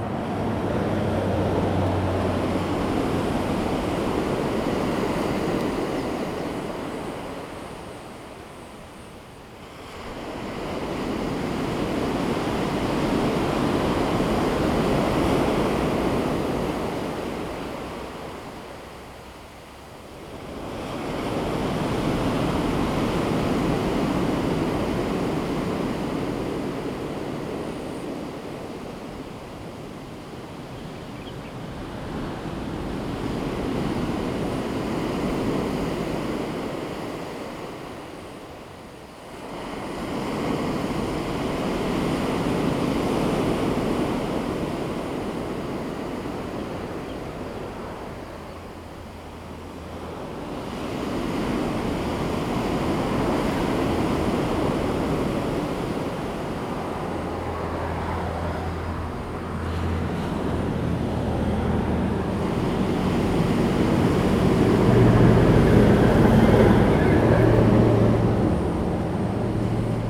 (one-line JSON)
{"title": "大溪 南迴公路, Taimali Township - Coast on the highway", "date": "2018-03-28 09:44:00", "description": "Coast on the highway, Bird cry, Sound of the waves, Traffic sound\nZoom H2n MS+XY", "latitude": "22.47", "longitude": "120.95", "altitude": "18", "timezone": "Asia/Taipei"}